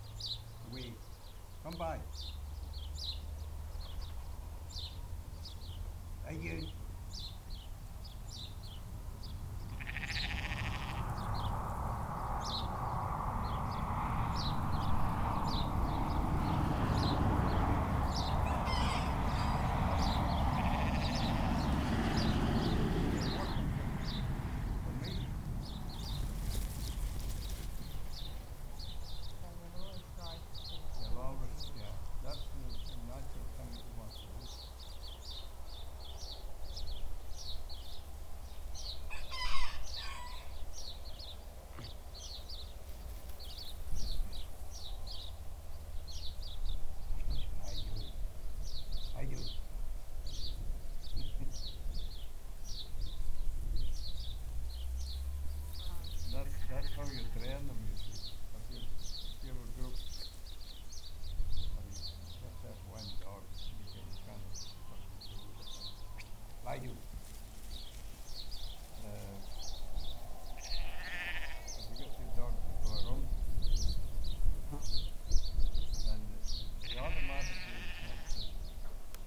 This is the sound of the enthusiastic sheepdogs herding the sheep at Burland Croft Trail. At different points in the recording you can hear the wind, the traffic on the road close by, and the low thunder of a lot of hooves moving in Unison across a field. You can also hear Tommy Isbister shouting commands to the dogs, and talking to me (some distance from the microphones) about the process of training sheep dogs. Although you can't hear what we're saying so clearly, I love the texture of chatting in this recording, because it reminds me of a lovely time, visiting with two amazing people. Tommy and Mary have been running the Burland Croft Trail since 1976, working and developing their crofts in a traditional way. Their main aim is to maintain native Shetland breeds of animals, poultry and crops, and to work with these animals and the environment in the tried-and-tested way that have sustained countless generations of Shetlanders in the past.